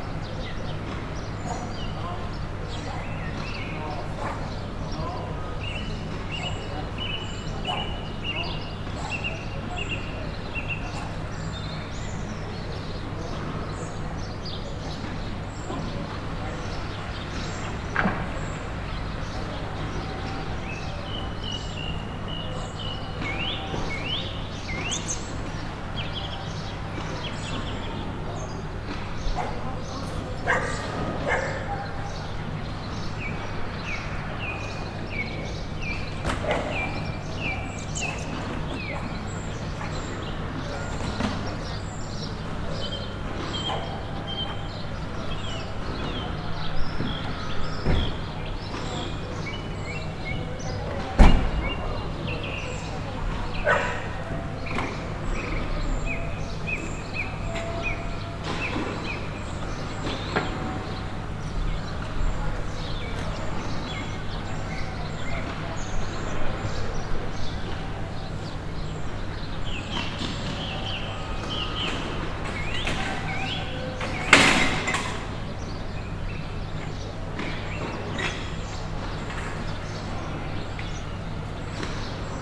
Amara Kalea, Donostia, Gipuzkoa, Espagne - Amara Kalea
atmosphere of the street 5th floor, barking dog, bells
Captation ZOOM H6